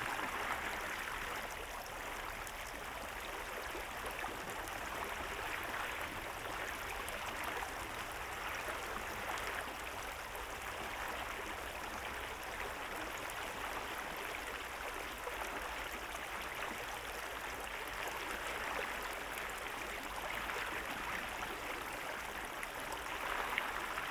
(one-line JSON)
{"title": "Utena, Lithuania, spring river", "date": "2021-03-19 16:50:00", "description": "standing at the spring river flow. recorded with Sennheiser Ambeo headset.", "latitude": "55.51", "longitude": "25.59", "altitude": "100", "timezone": "Europe/Vilnius"}